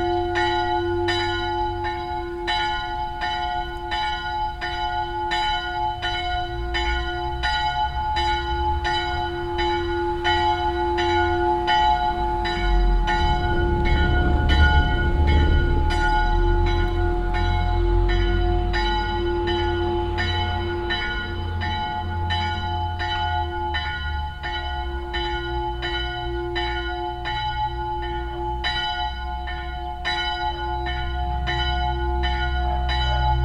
roder, church, bells
The sound of the noon church bells recorded from across the street on a windy late summer day. Some cars passing by on the stoney road.
Roder, Kirche, Glocken
Das Geräusch von der Mittagsglocke der Kirche, aufgenommen von der Straße gegenüber an einem windigen, spätsommerlichen Tag. Einige Autos fahren auf der steinigen Straße vorbei.
Roder, église, cloches
Le son du carillon de midi à l’église enregistré depuis l’autre côté de la rue, un jour venteux à la fin de l’été Dans le fond, on entend des voitures roulant sur la route en pierre.
Luxembourg, 17 September